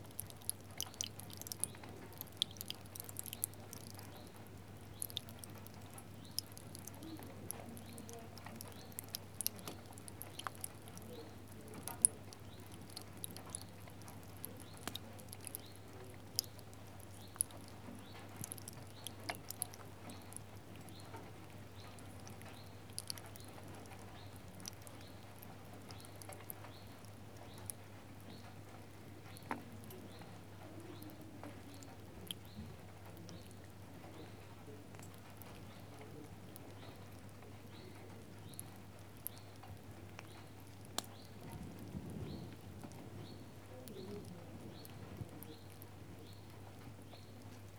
water dripping down a pcv drainpipe after a storm
Sasino, Poland, 15 August